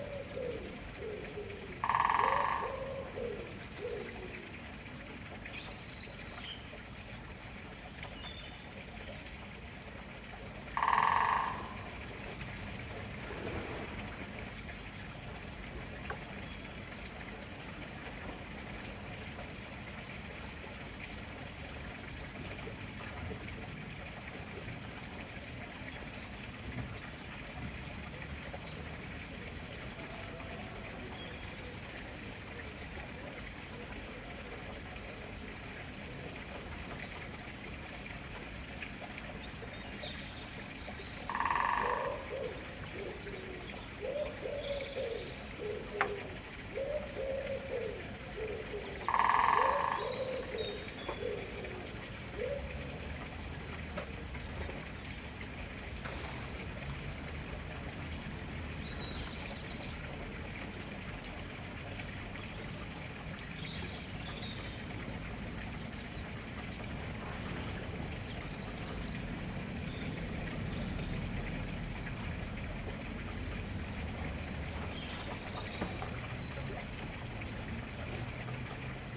This was quite a surprise. I've occasionally seen Greater Spotted Woodpeckers in the gardens here but they've never stayed long. This is the first time I've heard one actually drumming. It's found a particularly resonant spot in the tall sycamore visible from the bedroom window and has been busy two mornings in a row. Is it really staking out a territory in the neighbourhood? Definitely welcome. The running water sound is a neighbour's water feature, which is constantly present. I wish it would get switched off every now and again.